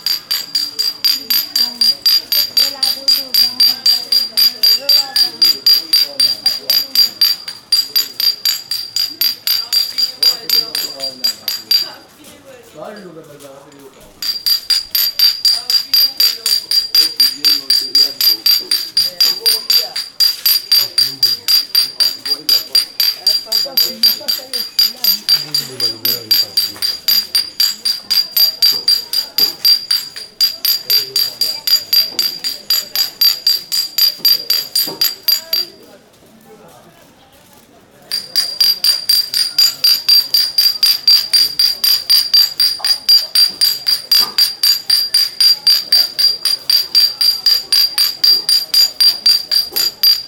Ave Du Progres, Kinshasa, RDC - Nail Varnish Street Seller in Kinshasa
Espoir is the name of this nail varnish street seller in Kinshasa.
He is knocking 2 small glass bottle varnish to announce he is passing by.
Recorded by a MS setup Schoeps CCM41+CCM8 on a 633 Sound Devices Recorder
May 2018, Kinshasa, RDC
GPS: -4.319810 / 15.325272